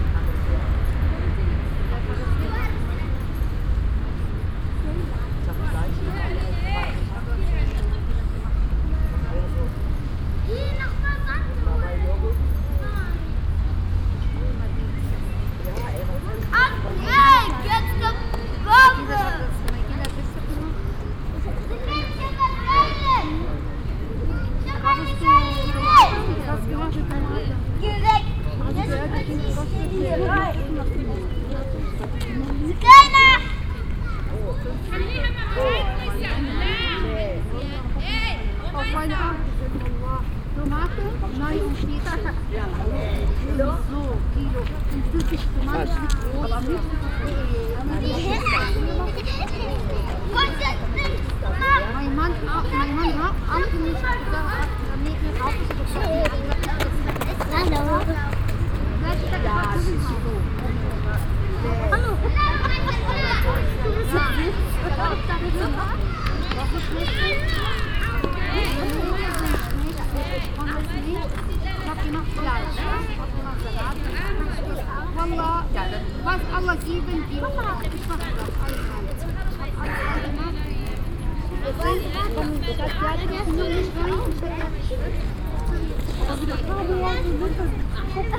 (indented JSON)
{
  "title": "essen, city park, playground",
  "date": "2011-06-09 22:51:00",
  "description": "At a children playground in the city park in the early evening in late spring time.\nProjekt - Klangpromenade Essen - topographic field recordings and social ambiences",
  "latitude": "51.45",
  "longitude": "7.01",
  "altitude": "100",
  "timezone": "Europe/Berlin"
}